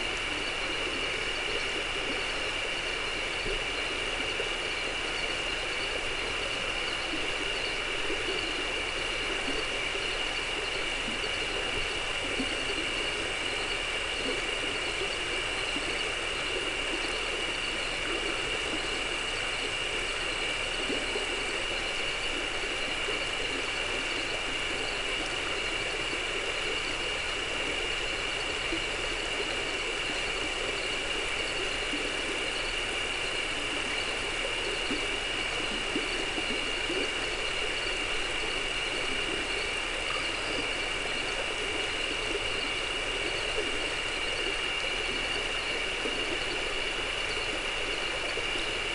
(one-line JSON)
{"title": "Pedernales River, TX, USA - Pedernales Riverbed", "date": "2015-10-03 21:45:00", "description": "Recorded with a Marantz PMD661 and a pair of DPA 4060s", "latitude": "30.30", "longitude": "-98.24", "altitude": "247", "timezone": "America/Chicago"}